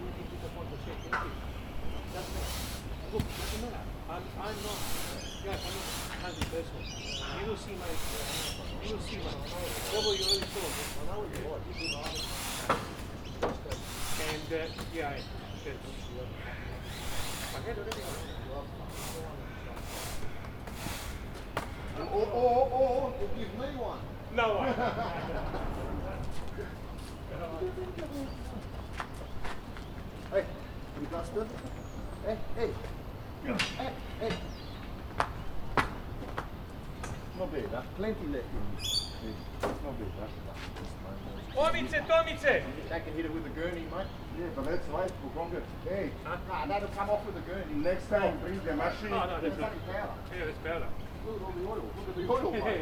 neoscenes: birds and construction workers
Bundoora VIC, Australia